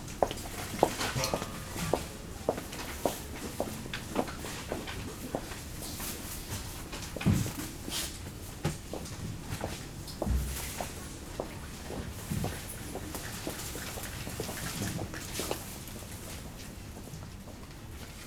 berlin, maybachufer: supermarket - the city, the country & me: inside the supermarket
inside the new supermarket, people looking for special offers
the city, the country & me: january 17, 2014